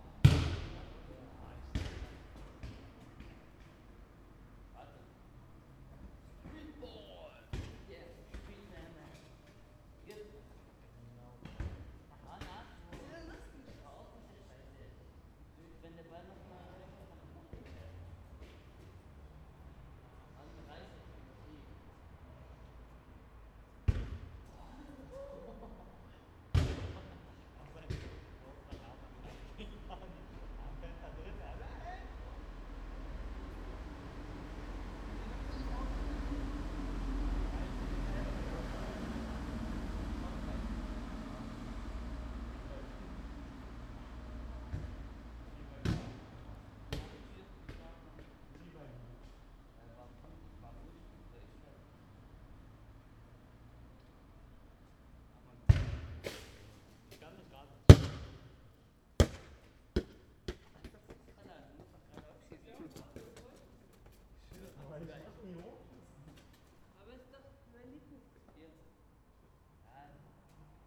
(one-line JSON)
{"title": "berlin, bürknerstraße: in front of radio aporee - night soccer in the street", "date": "2010-05-24 02:00:00", "description": "some youngsters playing soccer at night in front of my window. they try to hit something in the tree by kicking the ball.", "latitude": "52.49", "longitude": "13.42", "altitude": "45", "timezone": "Europe/Berlin"}